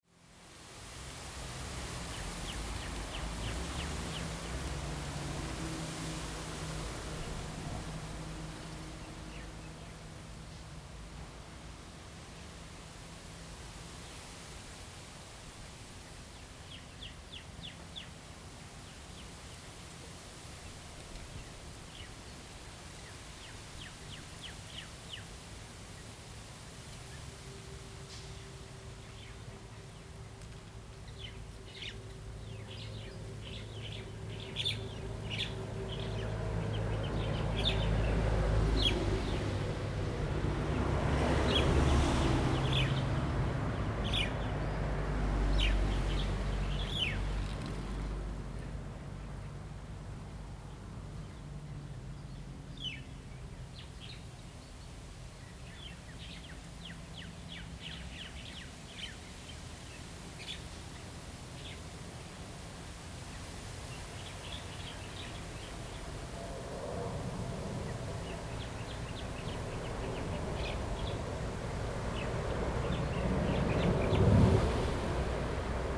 Huandao N. Rd., Jinning Township - Birds singing
Traffic Sound, Birds singing, Aircraft flying through
Zoom H2n MS +XY
金門縣 (Kinmen), 福建省, Mainland - Taiwan Border, 3 November 2014